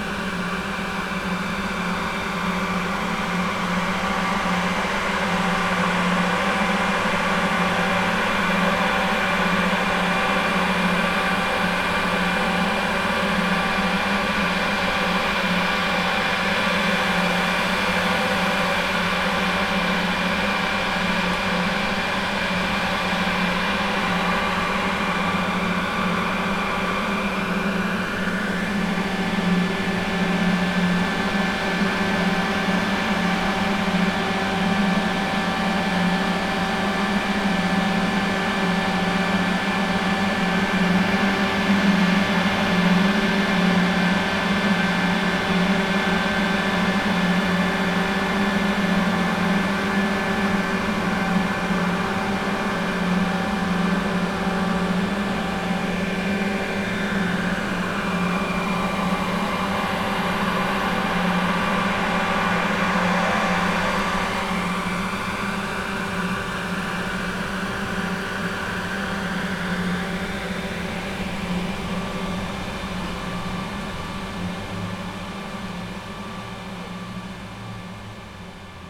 Rose Garden, Yambol, Bulgaria - water pumps
underground water pumps filling an artificial pond